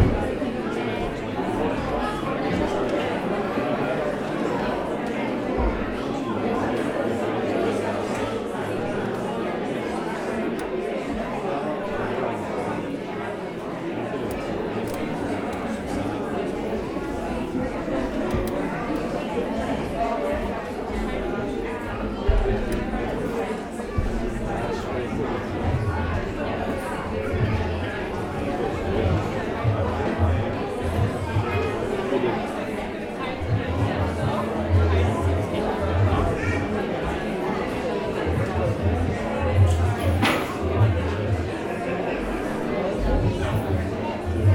neoscenes: before the Aladdin performance
Brunswick, New Zealand, 2 December 2010, 18:41